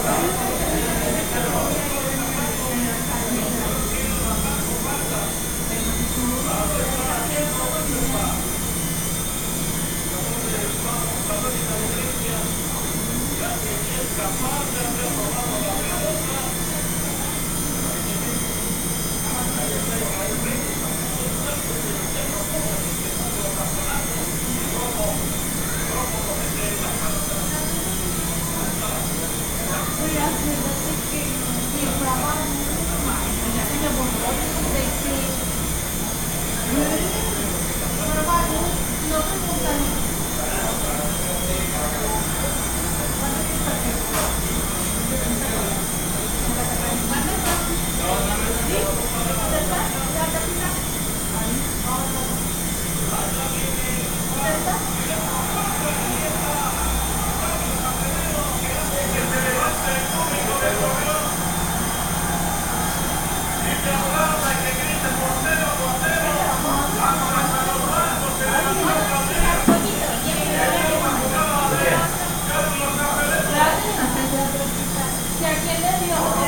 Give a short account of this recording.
Burger shop where they also sell nachos, hot dogs, milkshakes, and stuff like that. You can hear them preparing milkshakes, customers chatting at a nearby table, the cutting of plastic bags to pack the food to go, and the televisions on. I made this recording on june 11th, 2022, at 9:58 p.m. I used a Tascam DR-05X with its built-in microphones. Original Recording: Type: Stereo, Negocio de hamburguesas donde también venden nachos, perros calientes, malteadas y cosas de esas. Se alcanza a escuchar que están preparando malteadas, clientes platicando en una mesa cercana, el corte de bolsas de plástico para empacar la comida para llevar y las televisiones prendidas. Esta grabación la hice el 11 de junio 2022 a las 21:58 horas. Usé un Tascam DR-05X con sus micrófonos incorporados.